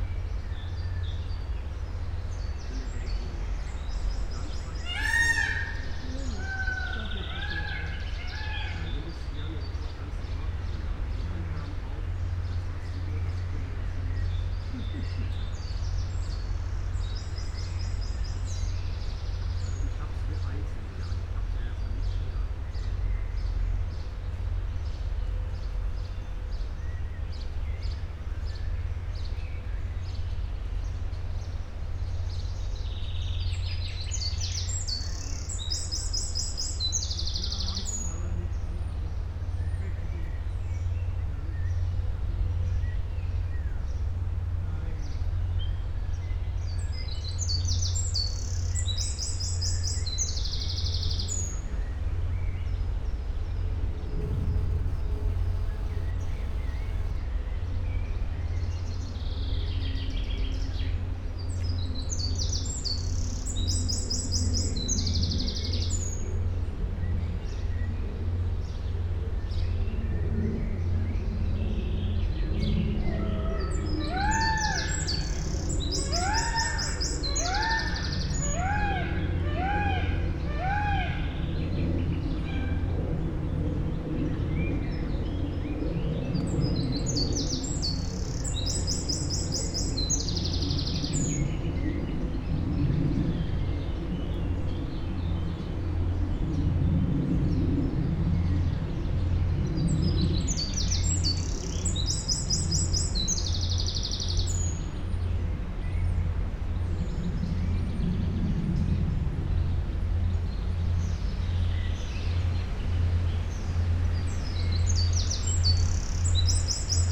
Pfaueninselchaussee, Berlin, Germany - caged and free voices
cocks and peacocks, spoken words, steps, wind in tree crowns